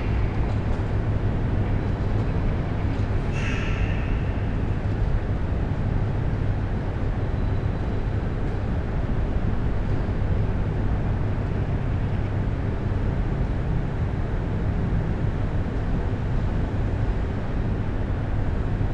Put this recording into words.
former ndsm shipyard, someone busy with a grinder, magpies (?) on the rattling glass roof, the city, the county & me: june 18, 2014